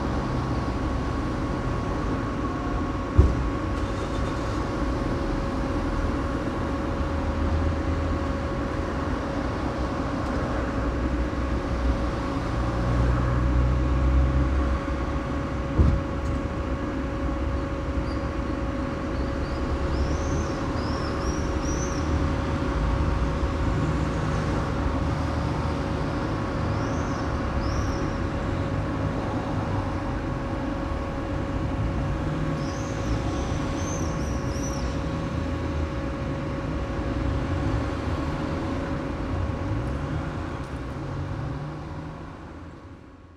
{"title": "Glavni trg, Maribor, Slovenia - Maribor2012 landmark: cona d", "date": "2012-06-14 20:32:00", "description": "another maribor 2012 inflated globe, this one on the main market square by the town hall.", "latitude": "46.56", "longitude": "15.64", "altitude": "270", "timezone": "Europe/Ljubljana"}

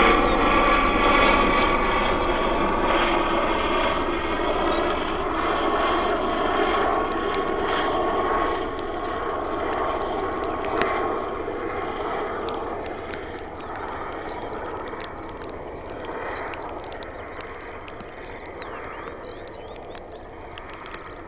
{"title": "Rain on hood, Penrith, UK - Rain on hood", "date": "2021-07-04 16:48:00", "latitude": "54.58", "longitude": "-2.78", "altitude": "308", "timezone": "Europe/London"}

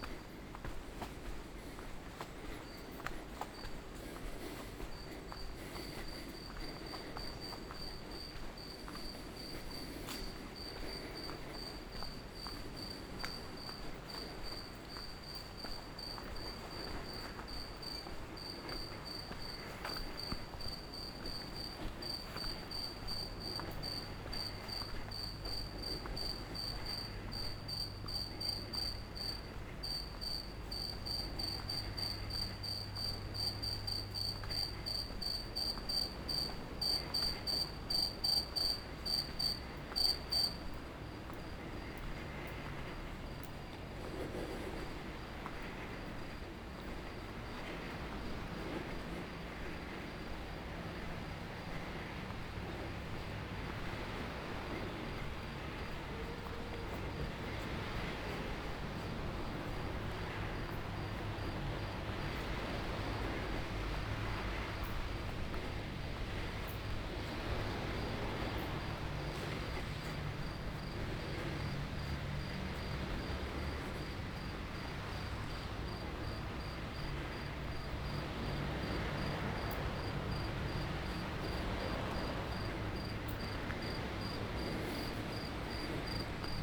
{"title": "Carrer Mendez Nuñez, Portbou, Girona, Spagna - Port Bou walking night", "date": "2017-09-27 23:54:00", "description": "Walk over night on the trace of Walter Benjamin: start at Port Bou City Library at 11:54 of Wednedsay September 27 2017; up to Memorial Walter Benjamin of Dani Karavan, enter the staircases of the Memorial, stop sited on external iron cube of Memorial, in front of sea and cemetery, back to village.", "latitude": "42.43", "longitude": "3.16", "altitude": "4", "timezone": "Europe/Madrid"}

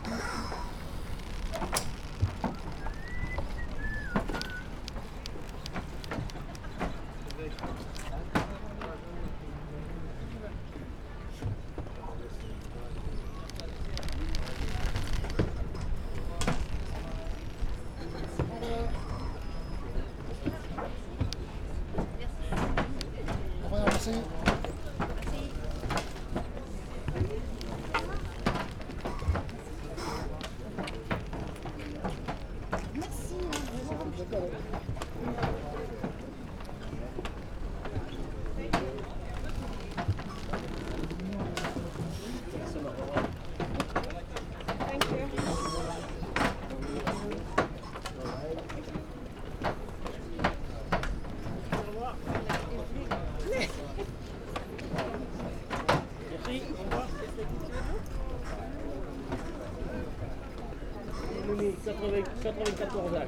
{"title": "Funchal, marina - catamaran arrival", "date": "2015-05-05 18:00:00", "description": "sound of a crunching rope holding a boat to the pier and of yacht hulls rubbing against each other. a catamaran arrives spewing out tourist from dolphin watching trip. the operators say thank you and good bay to the tourists.", "latitude": "32.65", "longitude": "-16.91", "altitude": "5", "timezone": "Atlantic/Madeira"}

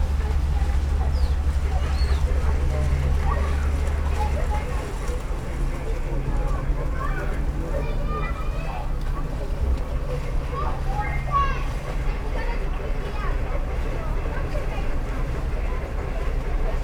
{"title": "Mateckiego street, Piatkowo district, Poznan - firetruck", "date": "2020-08-18 17:14:00", "description": "after a heavy storm a lot of water gathered behind the building so a fire brigade has been called to pump out all the water. hum of the fire truck's engine, blips of fireman shortwave transmiter and bitcrushed conversation over the radio. dogs barking with fantastic reverb over the nearby big apartment buildings. kids playing in the water, running around in their wellingtons (roland r-07)", "latitude": "52.46", "longitude": "16.90", "altitude": "96", "timezone": "Europe/Warsaw"}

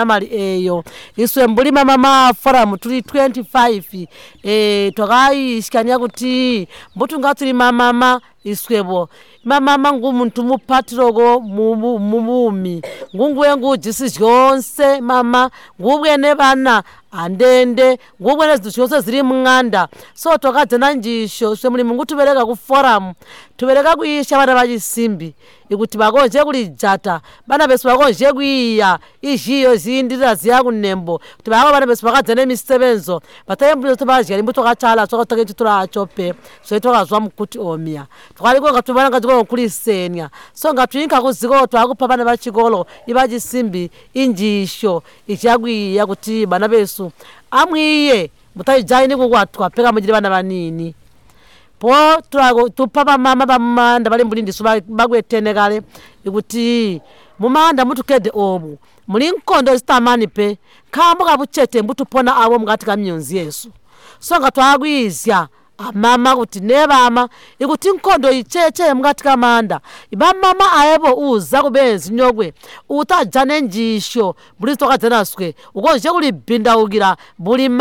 {"title": "Chibondo Primary, Binga, Zimbabwe - I'm Maria Munkuli, chair lady of Manjolo Women's Forum...", "date": "2016-07-08 08:15:00", "description": "Margaret Munkuli interviews Maria Munkuli, the Chair Lady of Zubo’s Manjolo Women’s Forum. Maria tells the story and history of the Forum since its inception. She emphasizes the collective project of Manjolo Women’s Forum which is to collect Baobab fruits (Mabuyo) and produce Baobab Maheo (Muyaya). Maria describes how the local community benefitted from the success of the project. The women used to share and distribute Maheo to vulnerable members of the community, to old people and school children. With the profits of selling Maheo through local shops in Binga and Manjolo, they supported three orphans in the community, enabling them to go to school. The project is currently on hold due to requirements of the Ministry of Health that the project ought to have its own production shed.\na recording from the radio project \"Women documenting women stories\" with Zubo Trust, a women’s organization in Binga Zimbabwe bringing women together for self-empowerment.", "latitude": "-17.76", "longitude": "27.41", "altitude": "628", "timezone": "Africa/Harare"}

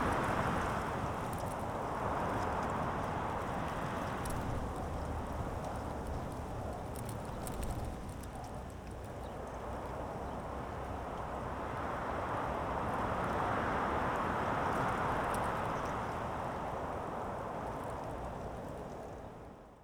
{"title": "Dingli, Malta - wind", "date": "2017-04-08 15:40:00", "description": "attempts to record the wind at Dingli cliffs...\n(SD702, AT BP4025)", "latitude": "35.85", "longitude": "14.38", "altitude": "200", "timezone": "Europe/Malta"}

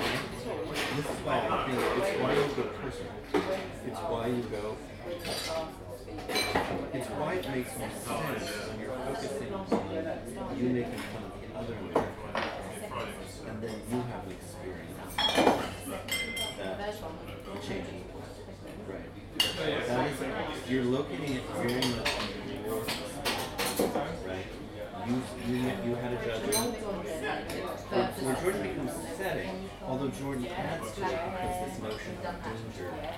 {"title": "The Lamb Pub, Lamb's Conduit Street, London - The Lamb Pub, London.", "date": "2017-06-26 17:35:00", "description": "Late afternoon drinkers in a nearly 300 year old pub. Chatting, till sounds and empty bottles being thrown (loudly) into a recycle container. No music and very pleasant. Zoom H2n", "latitude": "51.52", "longitude": "-0.12", "altitude": "27", "timezone": "Europe/London"}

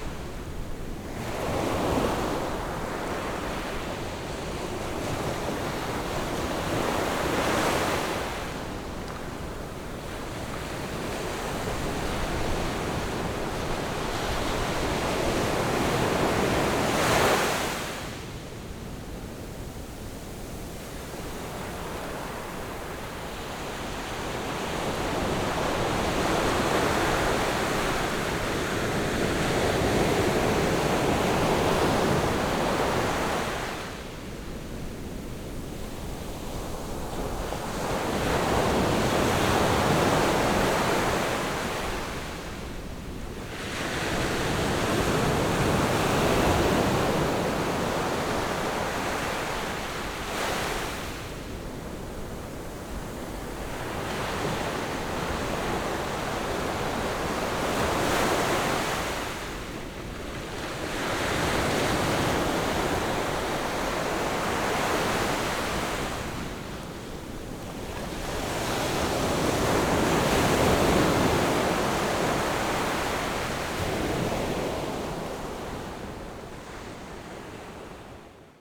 {"title": "壯圍鄉東港村, Yilan County - Sound of the waves", "date": "2014-07-26 13:12:00", "description": "Sound of the waves\nZoom H6 MS+ Rode NT4", "latitude": "24.72", "longitude": "121.83", "timezone": "Asia/Taipei"}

